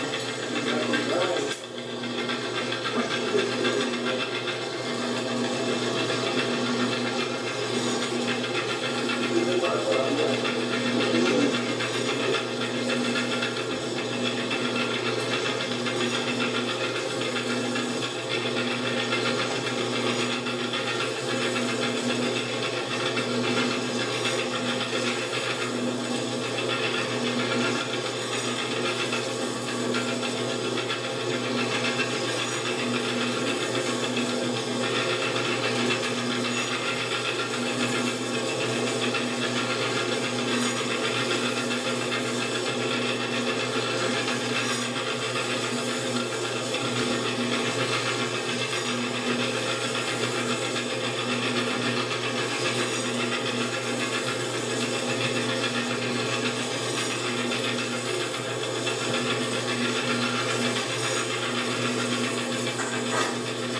Fazenda Sta. Alina, São Sebastião da Grama, SP, BR - small coffee roast
Roasting a coffee in a small roaster in the Laboratory of quality control for coffees beans of Sta. Alina Coffee farm.
São Paulo, Região Sudeste, Brasil, May 1988